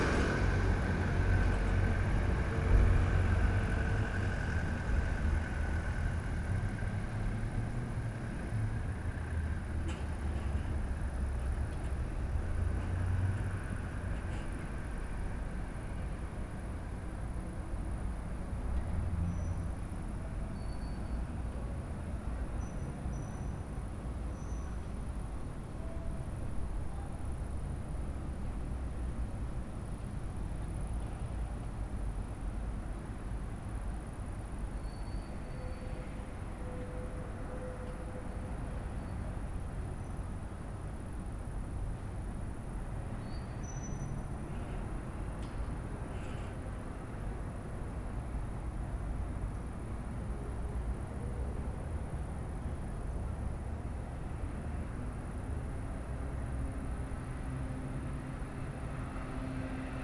one minute for this corner: Ulica heroja Šlandra 10
Maribor, Slovenia, 22 August 2012, 22:52